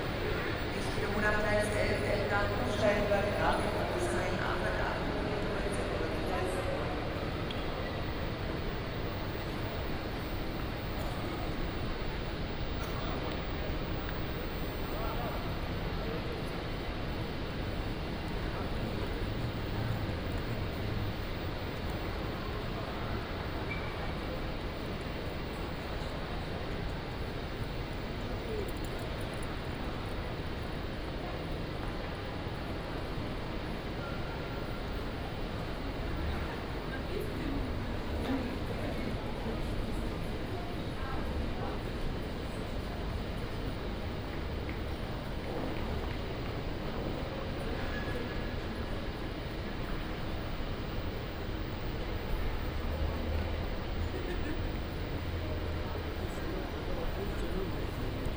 Central Station, Munich 德國 - In the station lobby
In the station lobby